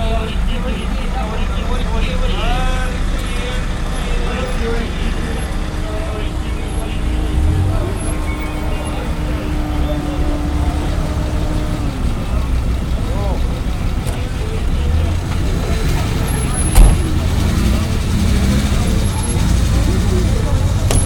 Chisinau, Moldova - Morning bustle at the regional bus station